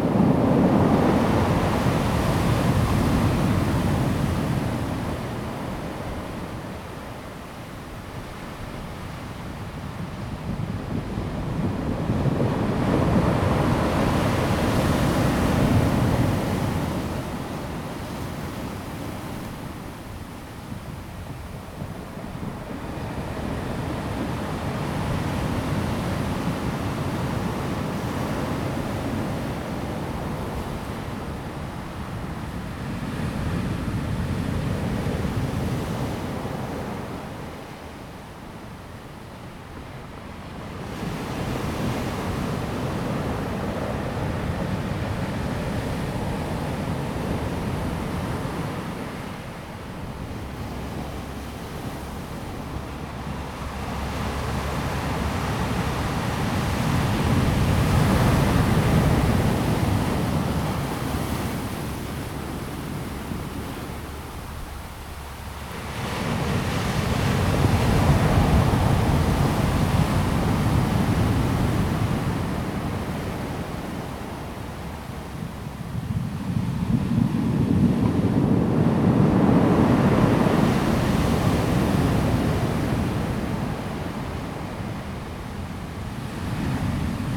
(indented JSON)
{
  "title": "南迴公路 南興, Dawu Township - Sound of the waves",
  "date": "2018-03-23 13:15:00",
  "description": "at the seaside, Sound of the waves\nZoom H2n MS+XY",
  "latitude": "22.31",
  "longitude": "120.89",
  "altitude": "2",
  "timezone": "Asia/Taipei"
}